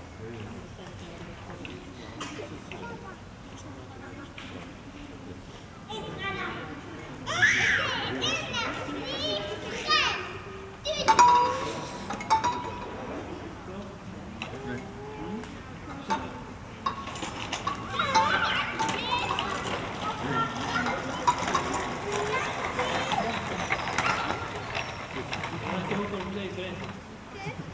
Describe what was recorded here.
Construction site nearby, a few birds. Tech Note : Ambeo Smart Headset binaural → iPhone, listen with headphones.